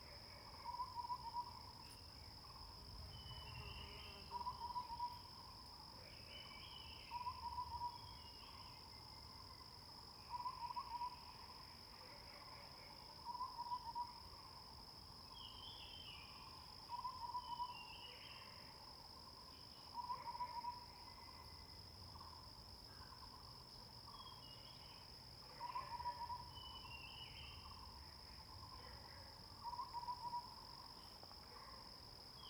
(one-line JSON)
{"title": "Hualong Ln., Yuchi Township - Bird and Frog sounds", "date": "2016-05-04 07:14:00", "description": "Bird sounds, Frog sounds\nZoom H2n MS+XY", "latitude": "23.93", "longitude": "120.89", "altitude": "725", "timezone": "Asia/Taipei"}